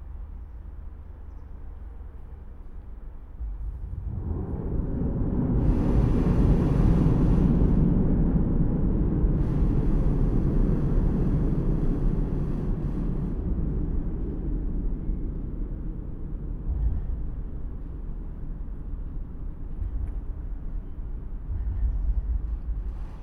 Floridsdorfer Hauptstraße, Wien, Austria - Trains on Floridsdorf bridge
Recording under Florisdorf bridge. Trains are passing by, little plane is flying.
Österreich